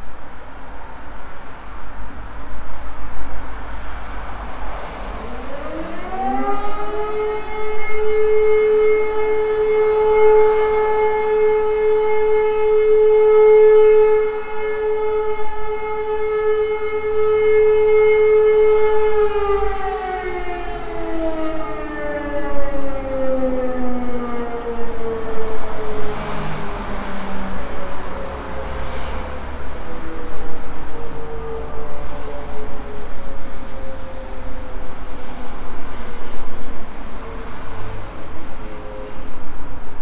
Linz, Österreich - offenes atelierfenster, sirenenprobe
atelierhaus salzamt: offenes atelierfenster, sirenenprobe
Austria, 10 January 2015